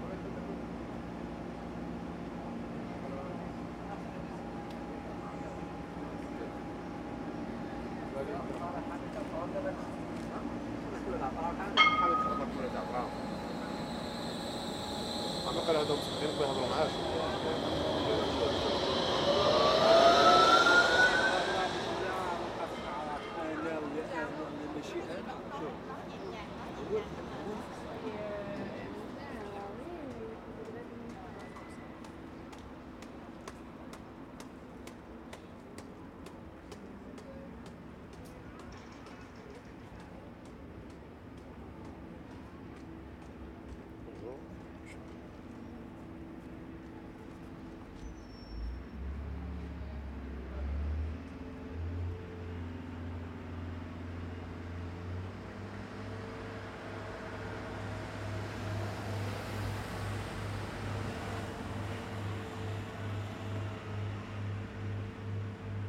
arrêt Moutonnerie rêve du loup - arrêt Moutonnerie
Tram arriving and departures, voices at the Tram Station Moutonnerie rêve du loup
wednesday april the 22th
Zoom H4 with AT8022
22 April 2015, 16:18